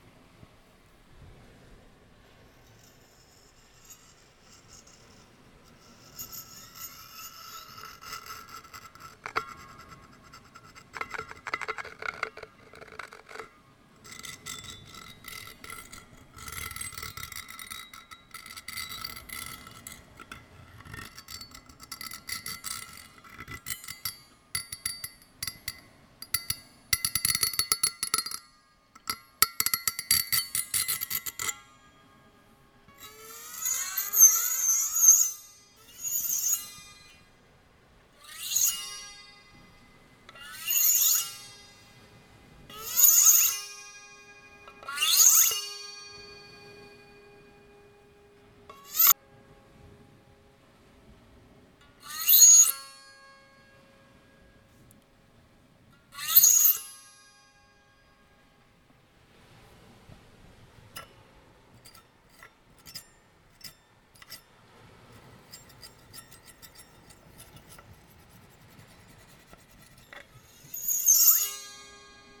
Trachoulas Beach, Gortina, Greece - Baglama slide against the rocks
You can hear a baglama sliding against the rocks, and some sea waves on the background. It was a really hot day.